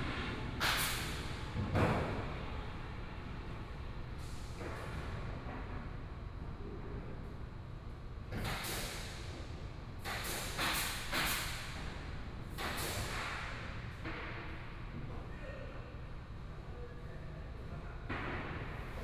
Taipei EXPO Park - Carpenter
Carpenter under construction, Aircraft flying through, Sony PCM D50+ Soundman OKM II